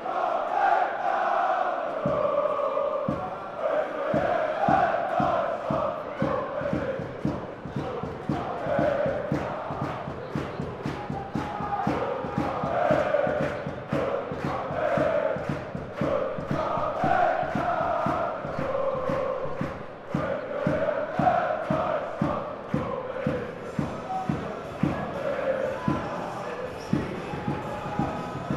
Randers NV, Randers, Danmark - Match start at local stadium
Randers against FC Copenhagen, at the presentation of the players.
Randers NV, Denmark, 26 April 2015, 19:00